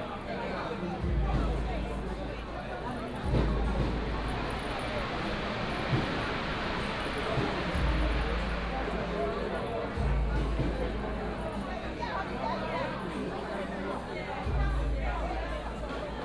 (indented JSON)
{
  "title": "拱天宮, 苗栗縣通霄鎮 - people crowded in the alley",
  "date": "2017-03-09 13:20:00",
  "description": "In the temple, people crowded in the alley",
  "latitude": "24.57",
  "longitude": "120.71",
  "altitude": "7",
  "timezone": "Asia/Taipei"
}